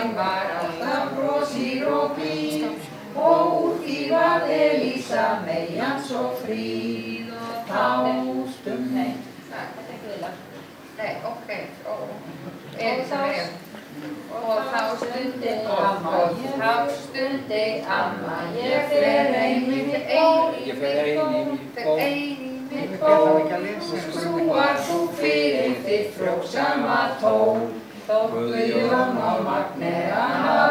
neoscenes: practice for Jon's 80th